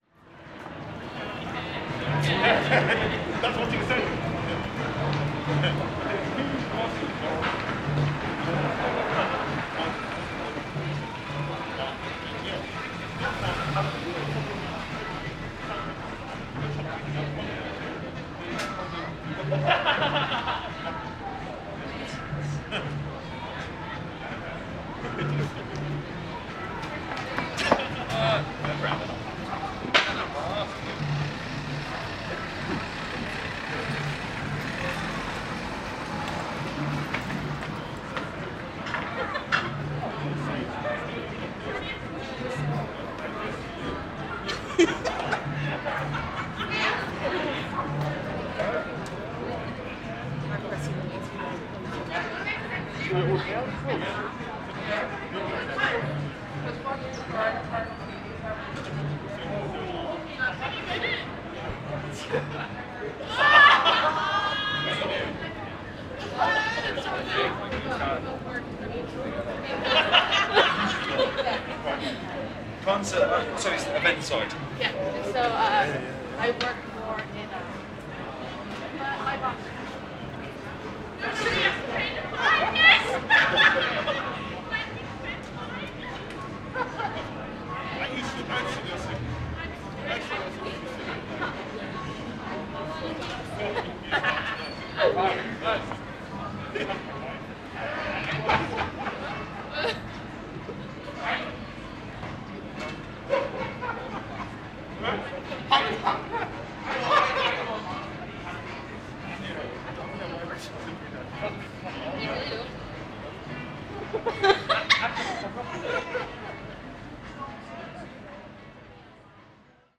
Recording in front of two bars (Bullitt and Bootleggers), queues of people waiting to go in, passersby, vehicles, and security guard chatter. This is a day before Lockdown 2 in Belfast.
County Antrim, Northern Ireland, United Kingdom, 15 October 2020